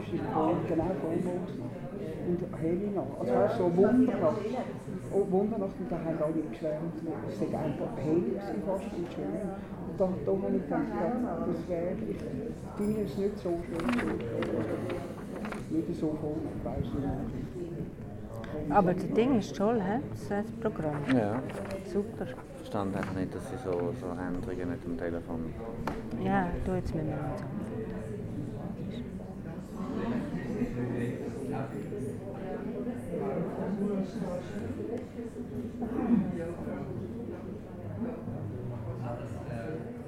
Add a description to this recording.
Warten auf Lesung, die Leute kommen nach und nach, angeregte Stimmung, Lesung von Adriana Altras